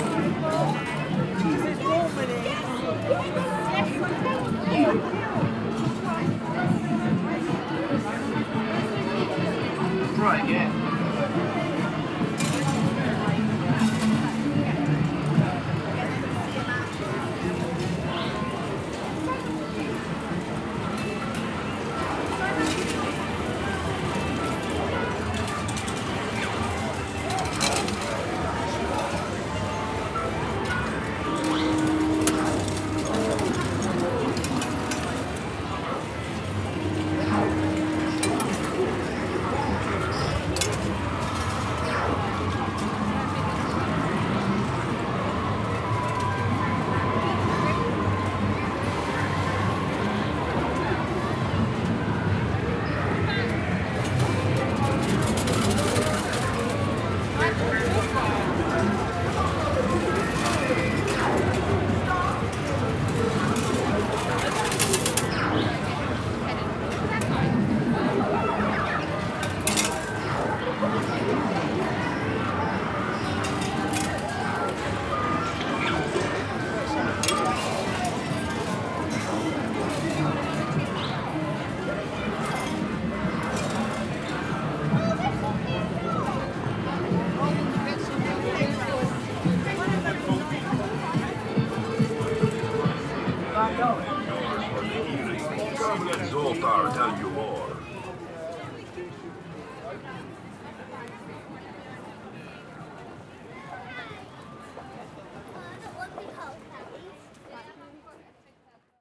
Brighton Pier, Brighton, United Kingdom - Music, money, and arcade machines
A short trip through the sensory overload of the Brighton Pier arcade hall - pennies and flashing lights everywhere, an assault of holy noise.
(rec. zoom H4n internal mics)